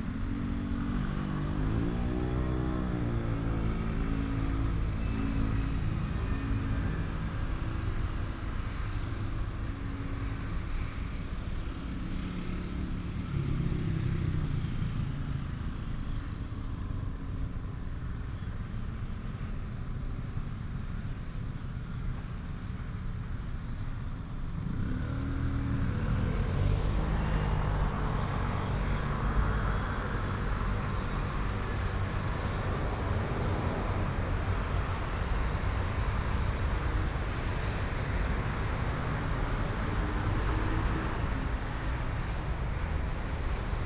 Sec., Xinglong Rd., Zhubei City - traffic sound
traffic sound, the train runs through
Hsinchu County, Taiwan, 26 August 2017, 09:02